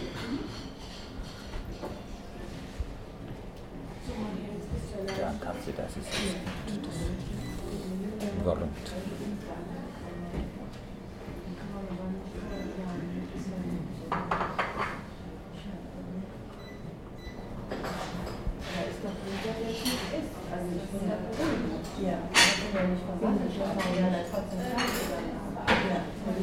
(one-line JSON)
{"title": "Gemünd, Schleiden, Deutschland - Stimmen und Geräusche in einem Cafe / Voices and sounds in a cafe", "date": "2014-02-22 16:30:00", "description": "Nach einer Wanderung über die Dreiborner Hochebene bei Kuchen und Kakao im Café in Gemünd.\nAfter a walk through the Dreiborner plateau with cake and cocoa in a café in Gemünd.", "latitude": "50.57", "longitude": "6.50", "timezone": "Europe/Berlin"}